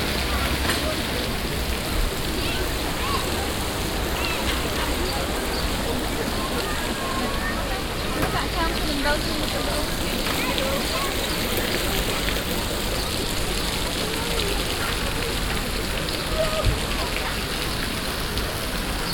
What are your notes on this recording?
art fountain with moving objects by swiss machine artist jean tinguely, international soundmap : social ambiences/ listen to the people in & outdoor topographic field recordings